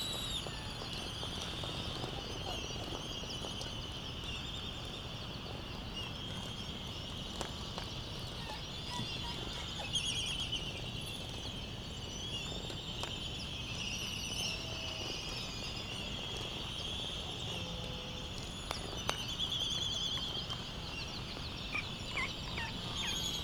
United States Minor Outlying Islands - Laysan albatross soundscape ...
Laysan albatross soundscape ... Sand Island ... Midway Atoll ... laysan albatross calls and bill clapperings ... white terns ... canaries ... open lavalier mics either side of a fur covered table tennis bat used as a baffle ... wind thru iron wood trees ... background noise ...
18 March, 08:00